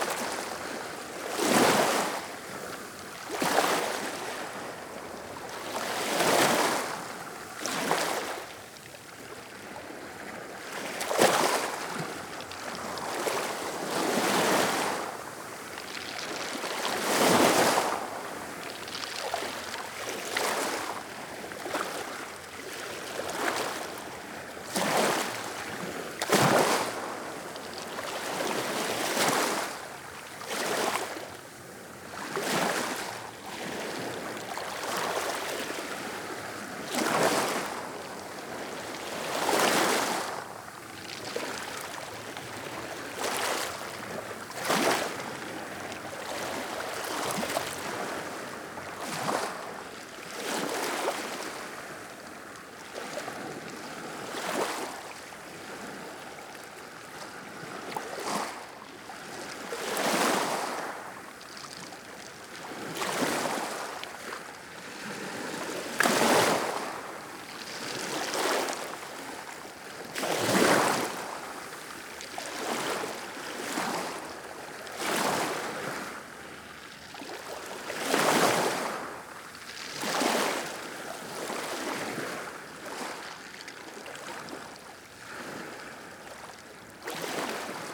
Partida la Olla, Altea, Alicante, Espagne - Altea - Province d'Alicante - Espagne Plage de Olla
Altea - Province d'Alicante - Espagne
Plage de Olla
Ambiance - vagues sur les galets
ZOOM F3 + AKG C451B